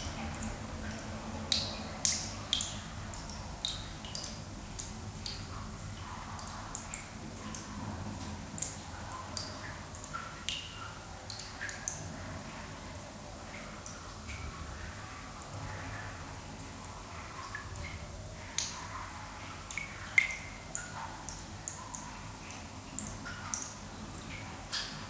Père-Lachaise, Paris, France - Crematorium Cistern - Père Lachaise Cemetery
Recorded with a pair of DPA 4060s and a Marantz PMD661.